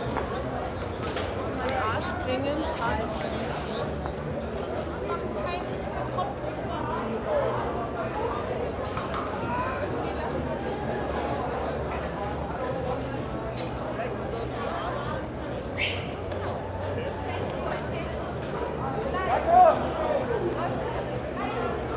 berlin, skalitzer straße: 1st may soundwalk (4) - the city, the country & me: 1st may soundwalk (4)
1st may soundwalk with udo noll
the city, the country & me: may 1, 2011
Berlin, Germany